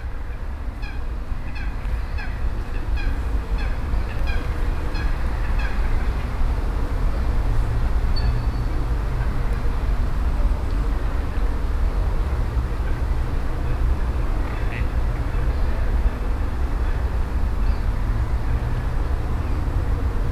Brussels, Jardin Expérimental Jean Massart Experimental Garden